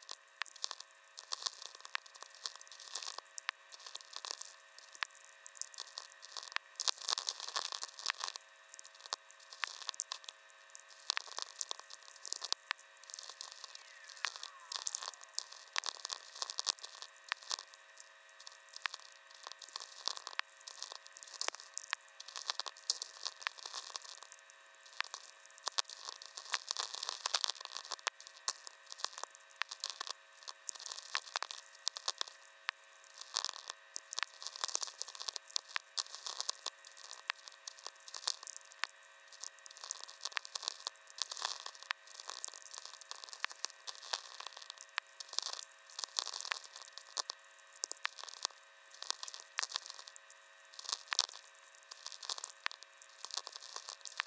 standing with VLF receiver in hand on the highest place of sand career..some usual atmospherics and one tweaker